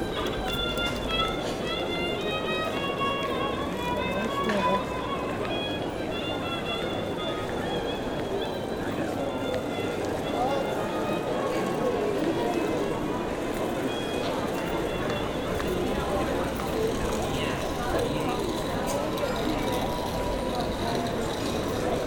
Antwerpen, Belgique - Strohviol player
A very poor person is badly playing strohviol, a small violin coming from Romania.